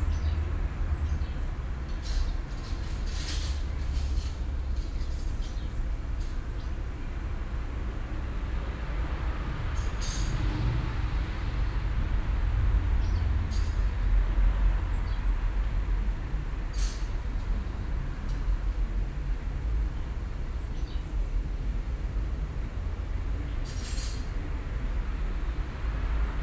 Sat., 30.08.2008 16:45
quiet backyard restaurant terrace. this place may sound different in the near future, a city autobahn is planned in the direct neighbourhood.
Berlin, 30 August 2008, 16:45